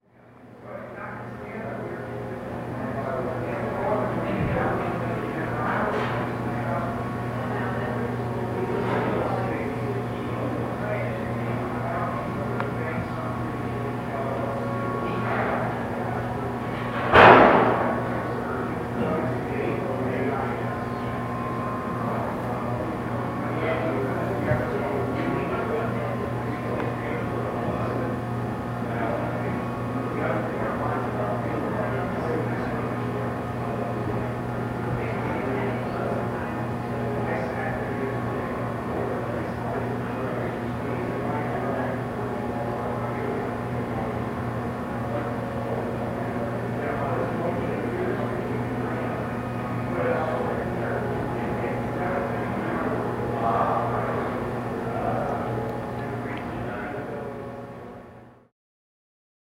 Bell Tower from inside Ettinger Hall